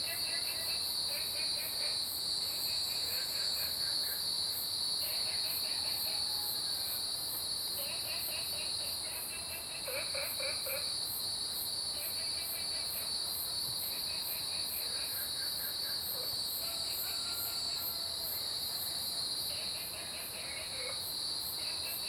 Woody House, 埔里鎮桃米里 - Cicada and frog sounds
Frogs chirping, Cicada sounds
Zoom H2n MS+XY